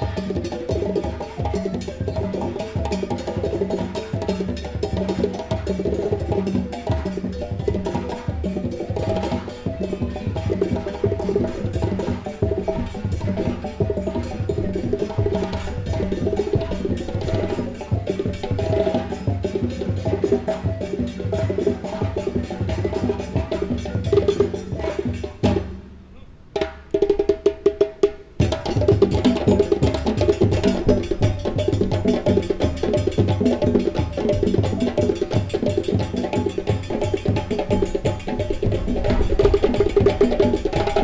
Parc de la Villette, Avenue Jean Jaurès, Paris, France - Parc de la Villette 2
recorded w/ Zoom H4n